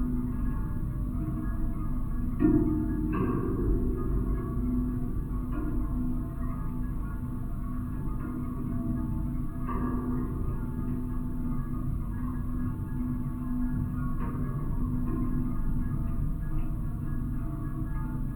Raudoniškis, Lithuania, leaking watertower stairs
LOM geophone on an element of stairs of leaking watertower
Utenos rajono savivaldybė, Utenos apskritis, Lietuva